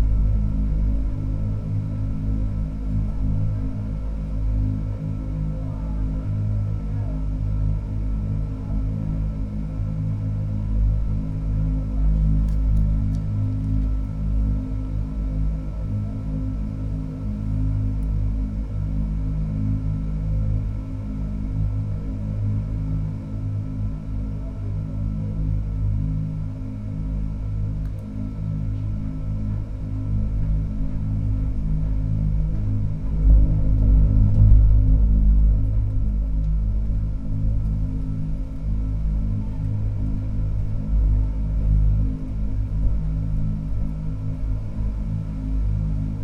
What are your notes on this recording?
sound of pikk jalg street, recorded in resonating rain drain